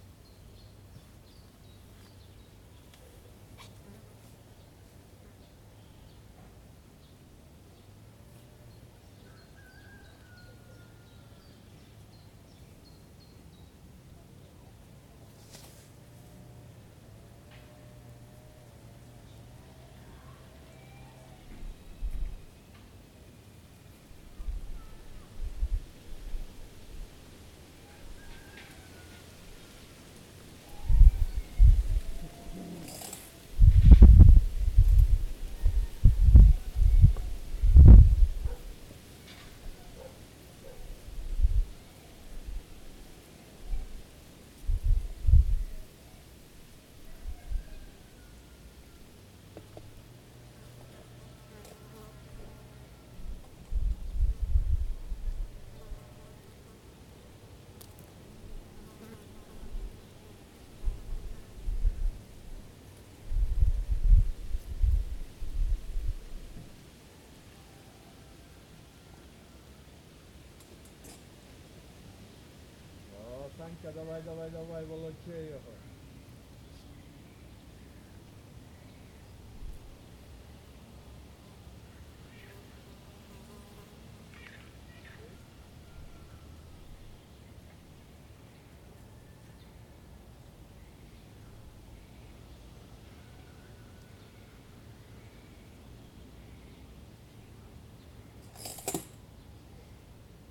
вулиця Зарічна, Вінниця, Вінницька область, Україна - Alley12,7sound2fishermen
Ukraine / Vinnytsia / project Alley 12,7 / sound #2 / fishermen